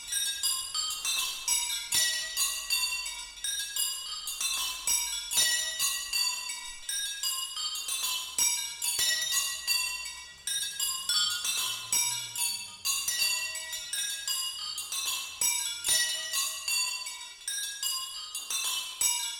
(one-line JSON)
{"title": "Rue Pen ar Bed, Confort-Meilars, France - Chime wheel", "date": "2016-08-10 17:00:00", "description": "This is a recording of chime wheel (\"roue à carillons\" in french), also named \"fortune wheel\" or \"glory wheel\", which used to be a very common percussion instrument in the Middle Ages in Brittany. It is comprised of twelve small bells covering the twelve notes composing an octave.\nThose wheels were primarily used for celebrating baptisms and weddings. This specific wheel can be found in the Notre-Dame-de-Confort church, and is the only known remaining in the Finistère, at the extreme west part of Brittany.", "latitude": "48.05", "longitude": "-4.43", "altitude": "64", "timezone": "Europe/Paris"}